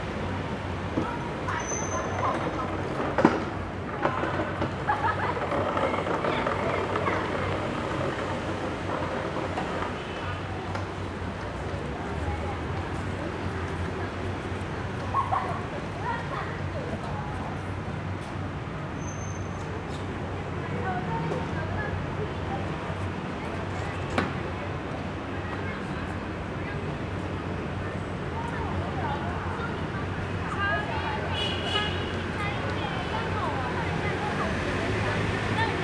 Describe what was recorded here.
in the Park, Traffic Sound, Children and the elderly, Sony Hi-MD MZ-RH1 +Sony ECM-MS907